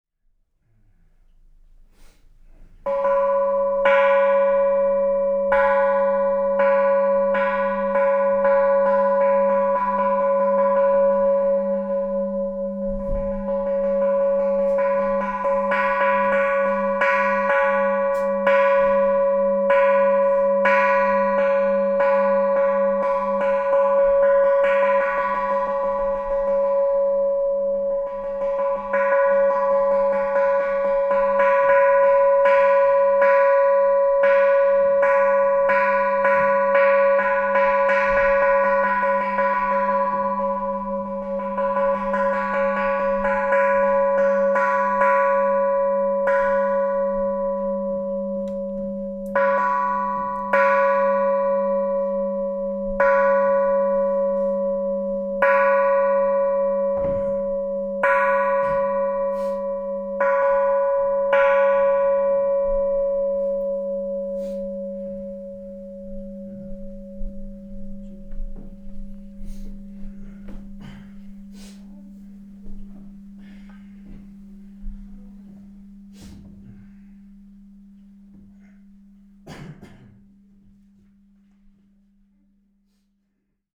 구륭사 절에서...우연히, 스님은 종을 연주 하는 것이 들였다...by chance I was present when a monk intoned the small hanging bell within the temple at Gooreung-sa...